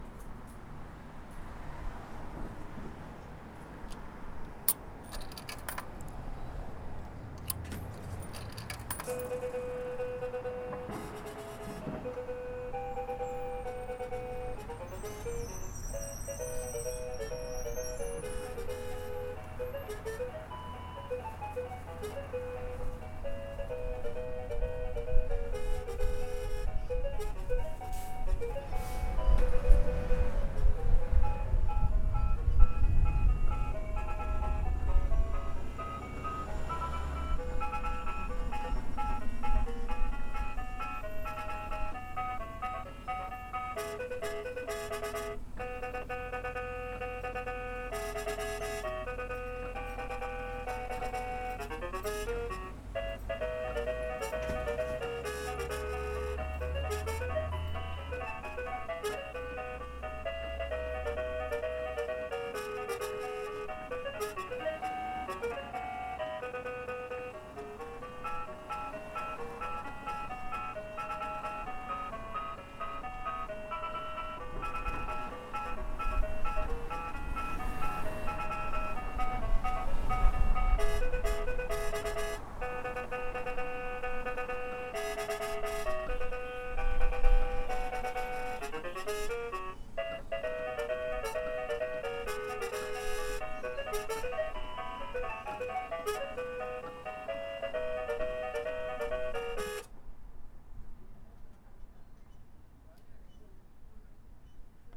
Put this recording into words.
Mechanical Horse Ride in Front of Bodega